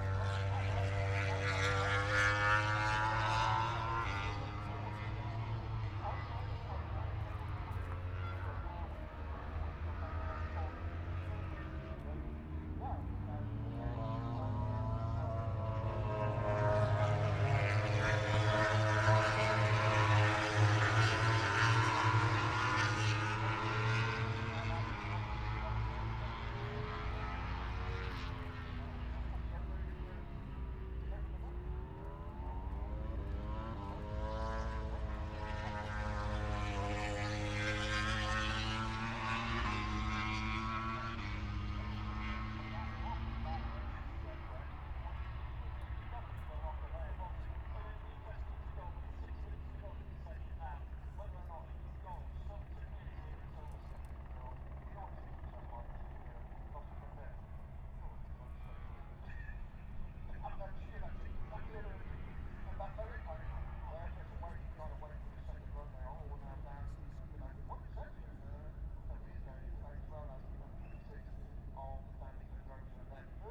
Silverstone Circuit, Towcester, UK - British Motorcycle Grand Prix 2017 ... moto grand prix ...
moto grand prix ... qualifying two ... open lavaliers clipped to chair seat ...